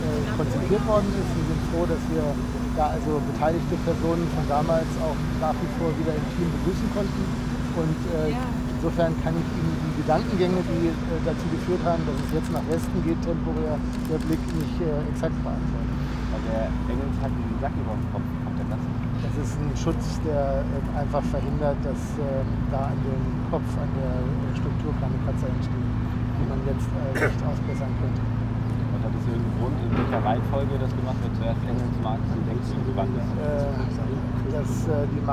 {"title": "Berlin, Marx-Engels-Forum - Interview", "date": "2010-09-07 13:25:00", "description": "by chance, ive listened to an interview held with a person in charge", "latitude": "52.52", "longitude": "13.40", "altitude": "38", "timezone": "Europe/Berlin"}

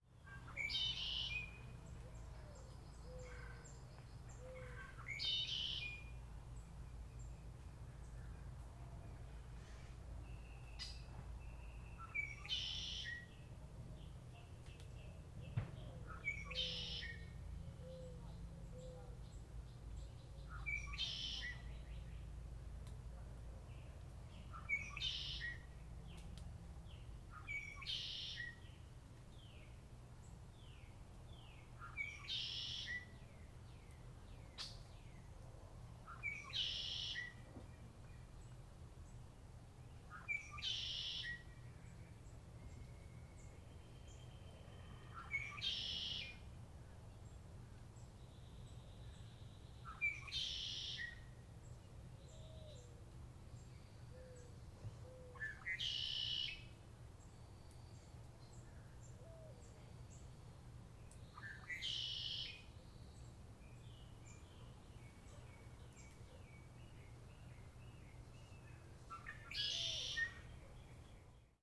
{"title": "Durante Park, Longboat Key, Florida, USA - Durante Park Red-winged Blackbird", "date": "2021-03-23 07:20:00", "description": "Red-winged blackbird in Durante Park", "latitude": "27.42", "longitude": "-82.66", "altitude": "5", "timezone": "America/New_York"}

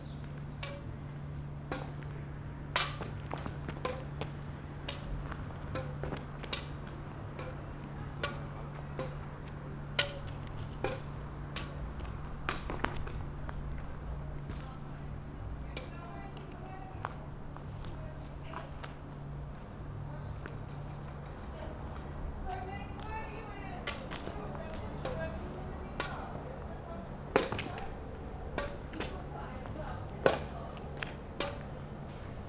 {"title": "Stalia, Horizon Beach Hotel, path - badminton match", "date": "2012-09-26 17:46:00", "description": "an excerpt of a badminton match we played with my girlfriend. hotel and street ambience in the back ground. short reverb, sound reflecting from two buildings close to each other and their balconies.", "latitude": "35.30", "longitude": "25.42", "altitude": "20", "timezone": "Europe/Athens"}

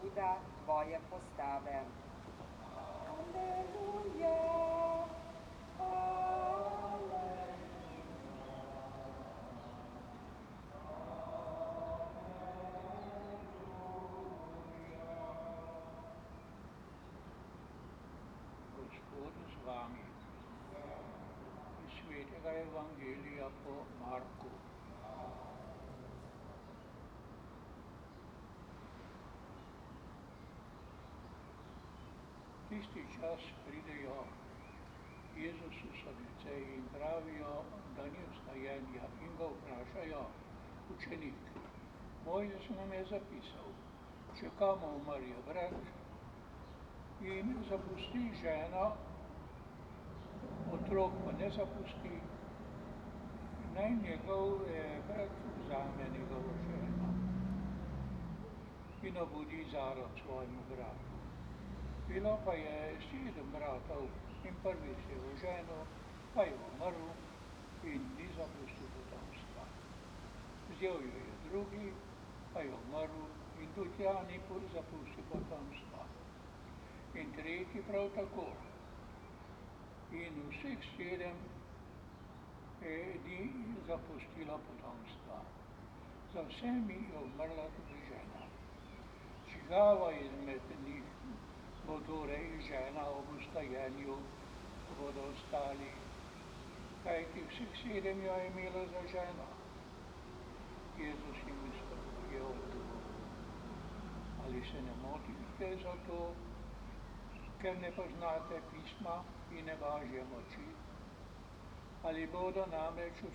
{"title": "Kapela, Nova Gorica, Slovenija - Pridiga", "date": "2017-06-07 19:09:00", "description": "Sermon.\nRecorded with Sony PCM-M10", "latitude": "45.95", "longitude": "13.64", "altitude": "132", "timezone": "Europe/Ljubljana"}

